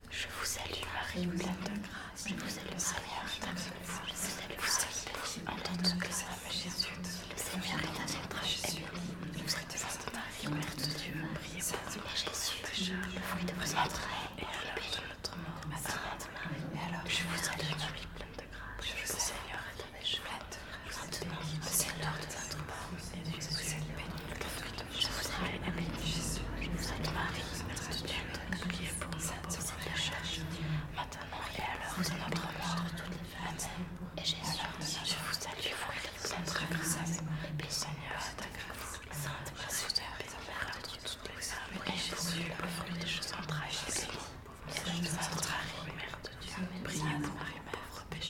Some people praying into the "Abbaye de La Cambre" in Brussels, Belgium.
They are praying "Je vous salut Marie" (in French).
Sound recorded by a MS setup Schoeps
Microphone CCM41+CCM8
Sound Devices 302 mixer
MS is encoded in STEREO Left-Right
recorded in Brussels in 2008